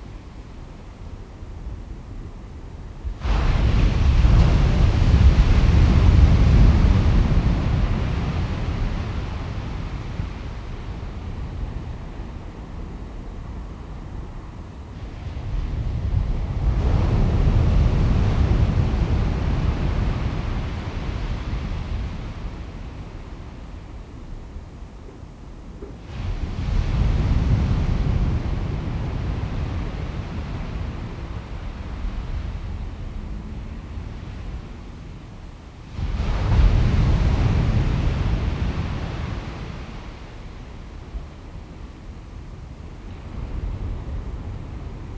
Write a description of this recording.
Recorded with a pair of DPA 4060s and a Marantz PDM661